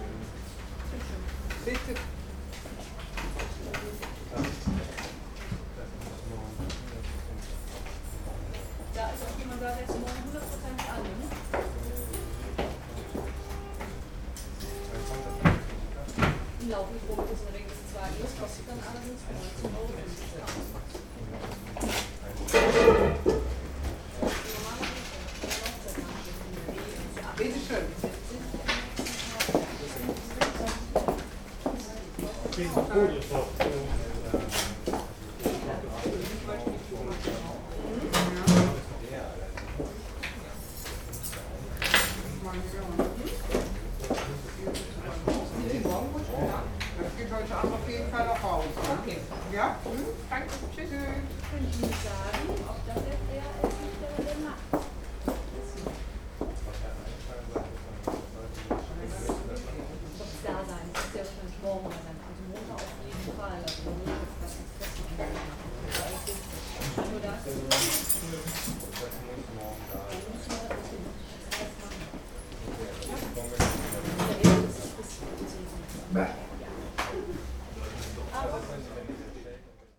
venloer str. - postbank
postal bank in the former 4711 / eau de cologne building. friday evening, closing time, still busy, and people are very kind.
Cologne, Germany, 18 September 2009